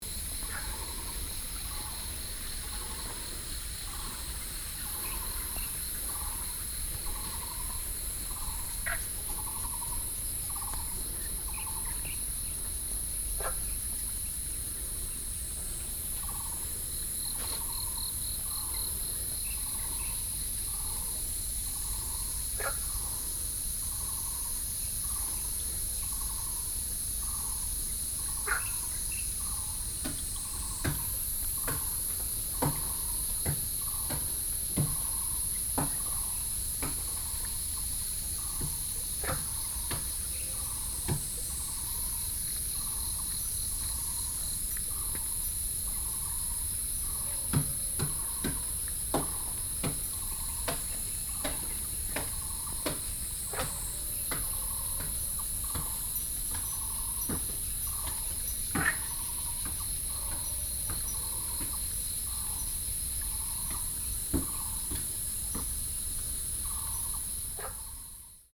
walking in the Hiking trails, Cicadas, Frogs, Sony PCM D50 + Soundman OKM II